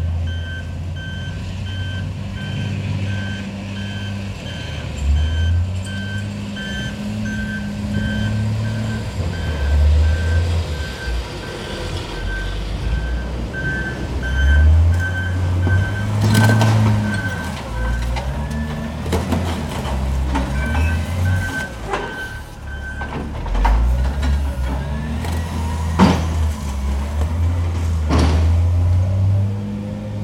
Ha'Carmel, Tel Awiw, Izrael - muggy soiree at Shuk Ha'Carmel I
muggy soiree at Shuk Ha'Carmel
July 2015
no-cut